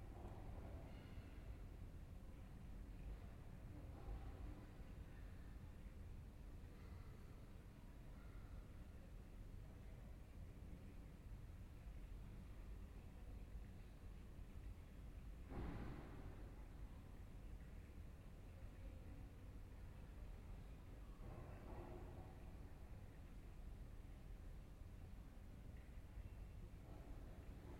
Aarau, Kirchplatz, Organ, Schweiz - Rehearsal with Organ
Inside of the church of Aarau there is a rehearsal with the organ.